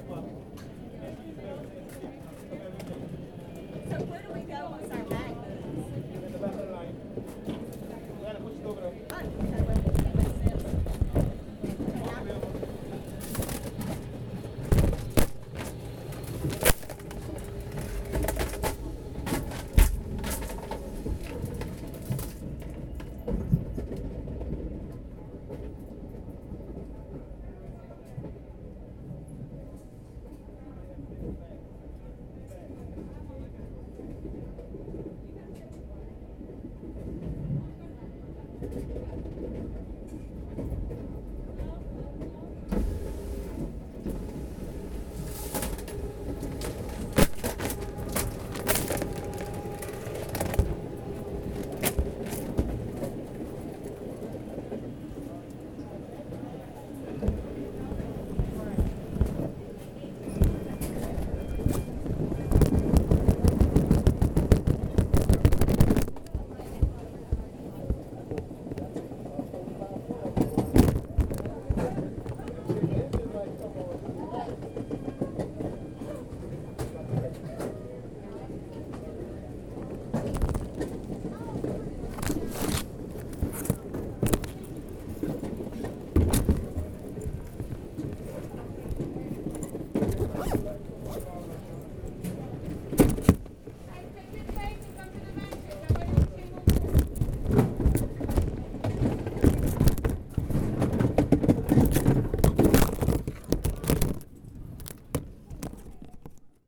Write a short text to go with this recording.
Arriving at the security checkpoint after waiting in line. This was captured on a Moto G7 Play with the Field Recorder app installed in order to ensure decent audio quality. In this recording, the phone is placed in a bin and brought through the bag checking machine. The acoustics are altered by the placement of the device within the bin. TSA officials and air travelers are heard from all directions.